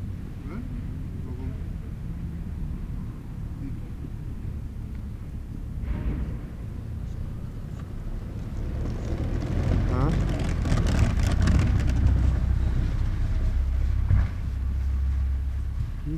Berlin: Vermessungspunkt Friedel- / Pflügerstraße - Klangvermessung Kreuzkölln ::: 17.12.2010 ::: 16:12
Berlin, Germany, 17 December, 17:53